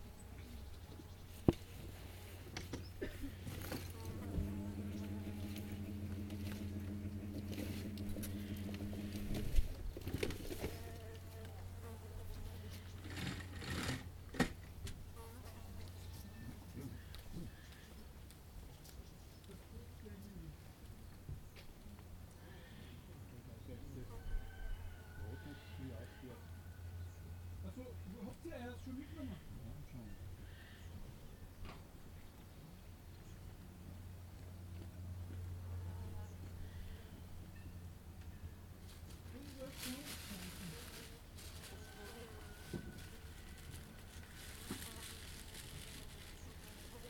{"title": "Puchen, Puchen, Rakousko - A morning in the campsite", "date": "2021-07-24 10:50:00", "description": "A sunny July morning in the campsite in the Alps. Recorded with Zoom H2n.", "latitude": "47.63", "longitude": "13.77", "altitude": "739", "timezone": "Europe/Vienna"}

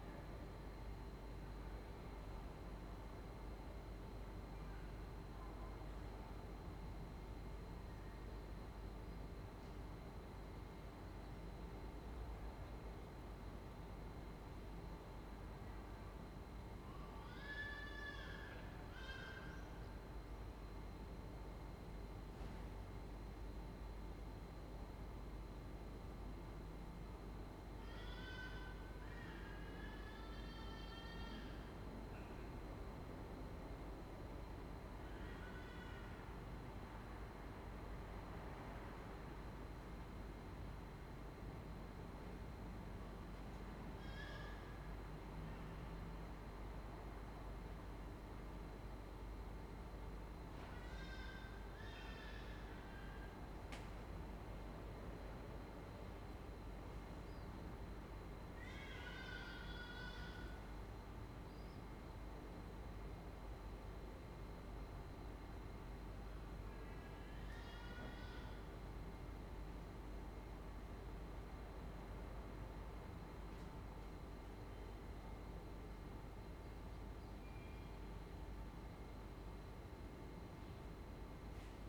{"title": "Ascolto il tuo cuore, città, I listen to your heart, city. Several chapters **SCROLL DOWN FOR ALL RECORDINGS** - Sunny Sunday without students and swallows voices in the time of COVID19 Soundscape", "date": "2020-07-12 18:50:00", "description": "\"Sunny Sunday without students and swallows voices in the time of COVID19\" Soundscape\nChapter CXVII of Ascolto il tuo cuore, città, I listen to your heart, city.\nSunday, July 12th 2020. Fixed position on an internal terrace at San Salvario district Turin, one hundred-three days after (but day forty-nine of Phase II and day thirty-six of Phase IIB and day thirty of Phase IIC and day 7th of Phase III) of emergency disposition due to the epidemic of COVID19.\nStart at 6:51 p.m. end at 7:51 p.m. duration of recording 01:00:00.\nCompare: same position, same kind of recording and similar “sunset time”:\nn. 50, Sunday April 19th: recording at 5:15 p.m and sunset at 8:18 p.m.\nn. 100, Sunday June 7th: recording at 6:34 p.m and sunset at 9:12 p.m.\nn. 110, Sunday June 21st: recording at 6:42 p.m and sunset at 9:20 p.m.\nn. 117, Sunday July 12th: recording at 6:50 p.m and sunset at 9:18 p.m.", "latitude": "45.06", "longitude": "7.69", "altitude": "245", "timezone": "Europe/Rome"}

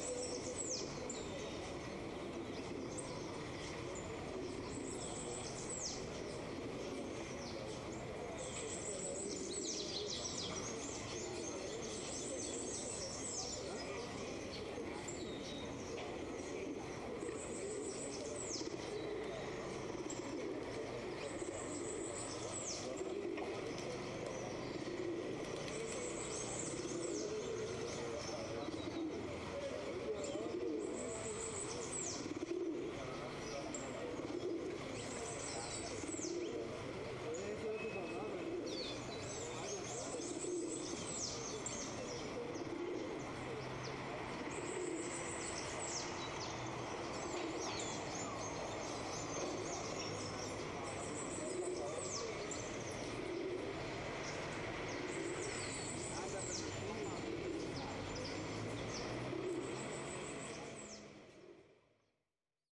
{"title": "Cl., La Mesa, Cundinamarca, Colombia - La Mesa Central Park 7 AM", "date": "2021-05-15 07:00:00", "description": "La Mesa is a place known for its tranquility and for being a good place to live and a sample of this is its central park in the morning hours, when the business has not yet opened its doors and we can hear the naturalness of the place. This is how the following characteristics allow us to feel: First of all, and out of social daily life, in the background we hear a slight traffic that is responsible for giving life to the fundamental sound of the park. In second sound position we find people talking in the early hours of the morning and it is here, under this particularity, that we can speak of the existence of a sound signal. And last but not least we have the great actors of this place, the birds and the pigeons, two groups of inevitable friends of a good central park in Colombia and it goes without saying that these two are in charge of carrying this sound brand of this place.\nTape recorder: Olympus DIGITAL VOICE RECORDER WS-852", "latitude": "4.63", "longitude": "-74.46", "altitude": "1278", "timezone": "America/Bogota"}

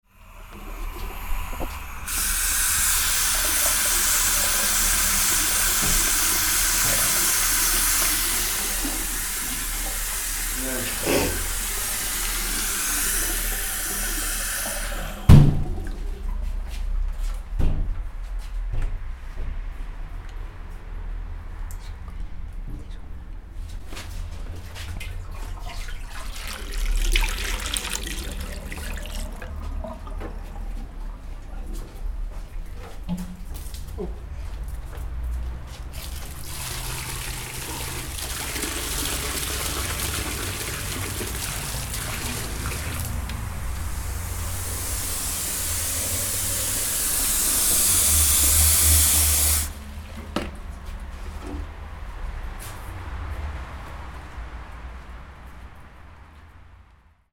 {"title": "Wasseranlage im Durchgang des Faulerbades", "date": "2011-07-13 12:39:00", "description": "ist wasser ist gestoppt ist dusche ist wc ist alles verlassen", "latitude": "47.99", "longitude": "7.84", "altitude": "269", "timezone": "Europe/Berlin"}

